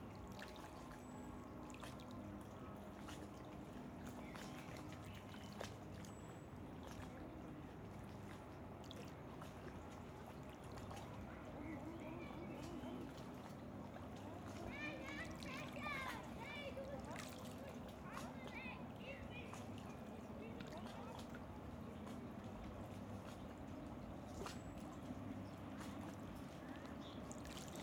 Zürich, Mythenquai, Schweiz - Sonntag morgen
Kleine Wellen, Lienenflugzeug, Kinder, Eltern, Kirchengeläut.